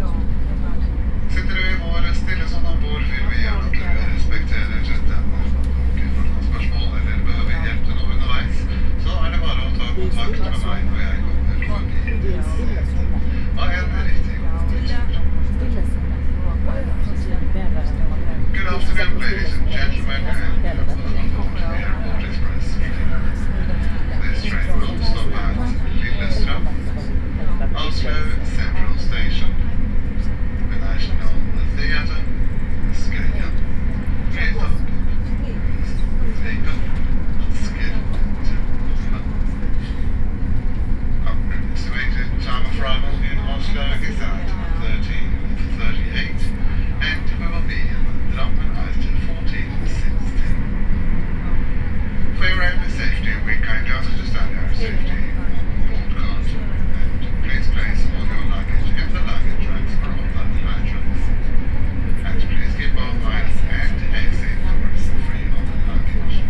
Oslo, Gardermoen Oslo airport, Flytoget
Norway, Oslo, Gardermoen, airport, Flytoget, train, binaural
2 June 2011, ~13:00